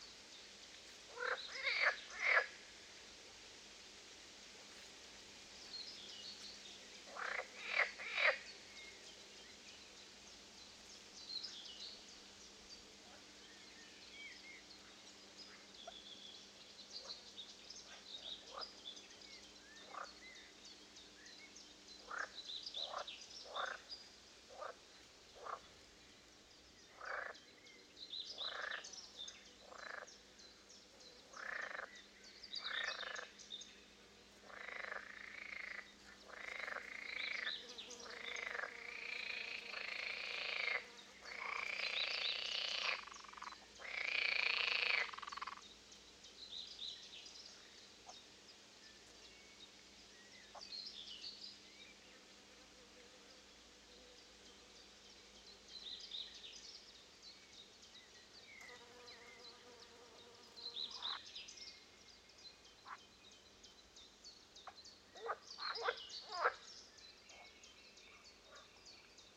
beautiful lake not so far from town. birds, frogs, wind...